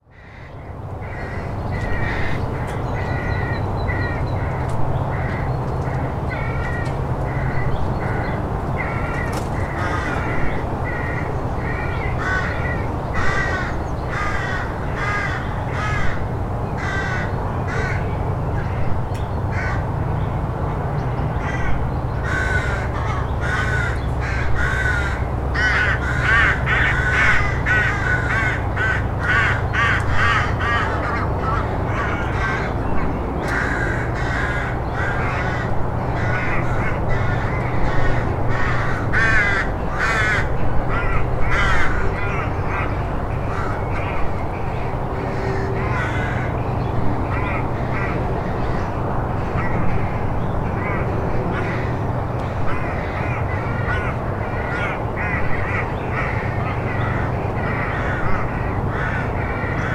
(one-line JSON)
{"title": "Monasterboice Cemetary and High Crosses, Co. Louth, Ireland - The Sunken Hum Broadcast 128 - Crows at Monasterboice High Crosses and Round Tower - 8 May 2013", "date": "2013-05-07 15:41:00", "description": "We stopped by to see the high crosses at Monasterboice. The crow babies were calling for food all around. I sat down inside the ruins of an old church to record them. Later, when I told my friend about it, she said I'd been sitting just next to her dad's grave.\nThis is the 128th Broadcast of The Sunken Hum, my sound diary for 2013.", "latitude": "53.79", "longitude": "-6.42", "altitude": "101", "timezone": "Europe/Dublin"}